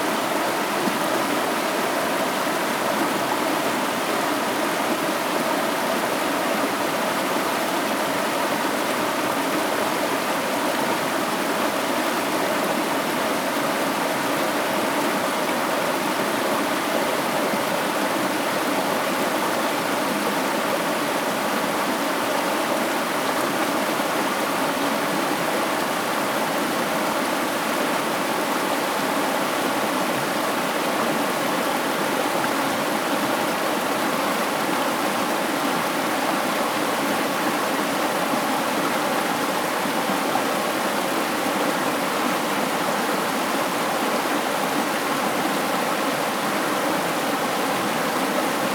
The sound of the river
Zoom H2n MS+XY +Spatial audio
28 July 2016, 13:29